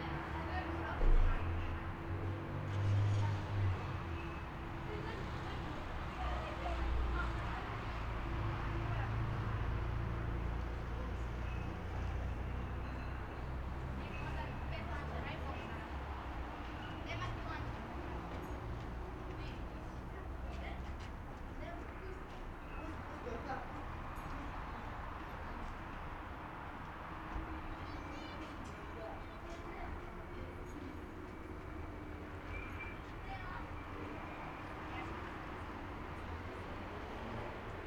Krnjevo, Rijeka, soundscape
Field recording, soundscape
rec. setup: M/S matrix-AKG mics (in Zeppelin mounted on Manfrotto tripod)>Sound Devices mixer. 88200KHz